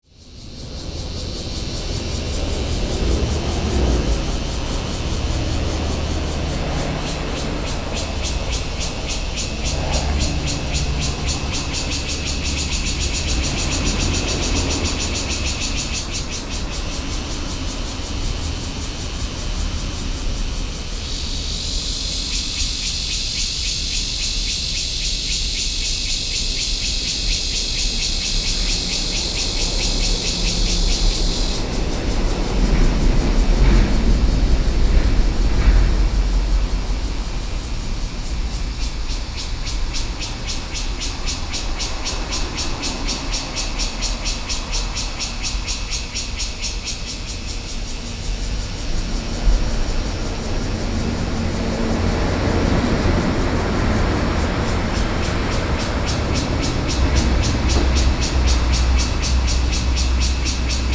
In the square in front of the temple, Cicadas cry, Aircraft flying through
Sony PCM D50+ Soundman OKM II